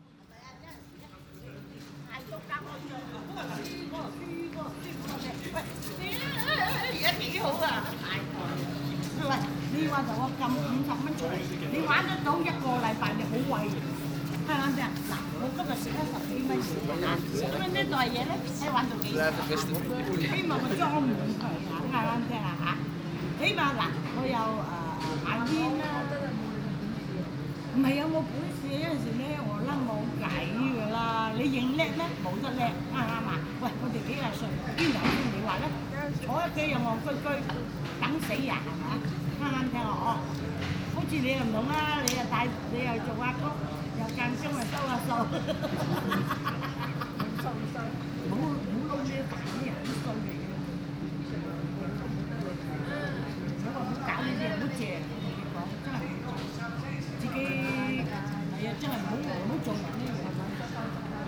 Amsterdam, Nederlands - Asian people talking
Asian people talking loudly into the street and quiet street ambiance on a sunny afternoon.